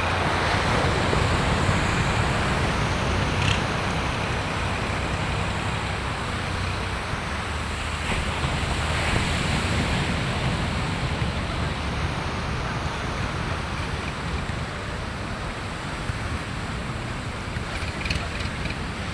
Rio de Janeiro, Copacabana beach